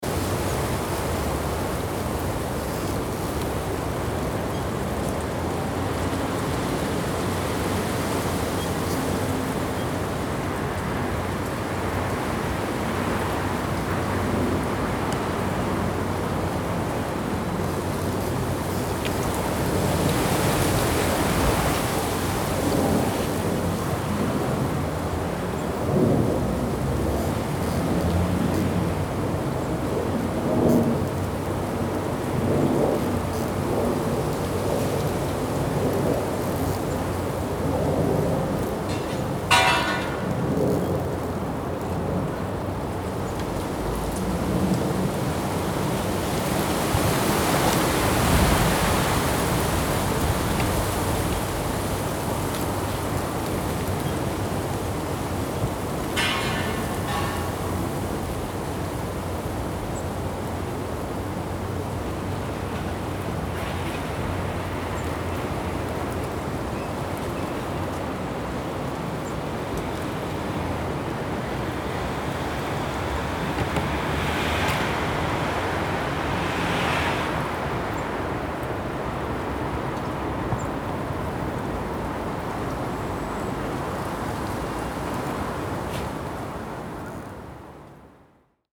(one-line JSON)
{"title": "Binckhorst, L' Aia, Paesi Bassi - Wind in the conifers", "date": "2013-03-25 13:45:00", "description": "Wind in the conifer needles and some traffic in the background. Recorded with Zoom H2n in mid/side mode.", "latitude": "52.07", "longitude": "4.34", "altitude": "2", "timezone": "Europe/Amsterdam"}